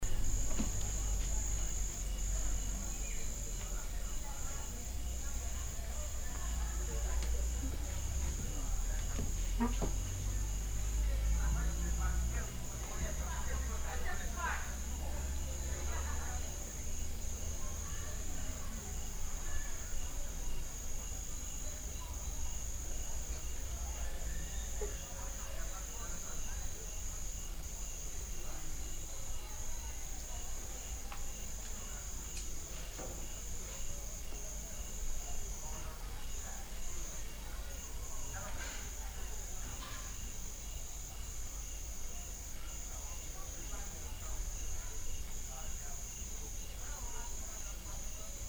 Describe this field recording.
ambiance enregistrée sur le tournage de bal poussiere dhenri duparc